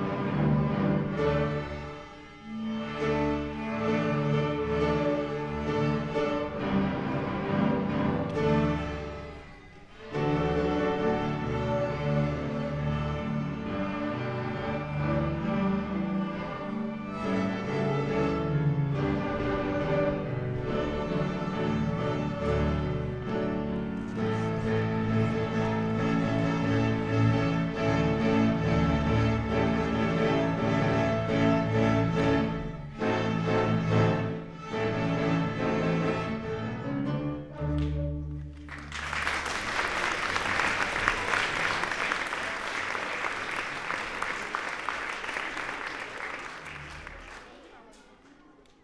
Altes Gymnasium, Oldenburg, Deutschland - pupil string concert
string concert of 5th class, most of them play an instrument only since a few months, but enjoy it quite a lot
(Sony PCM D50, Primo Em172)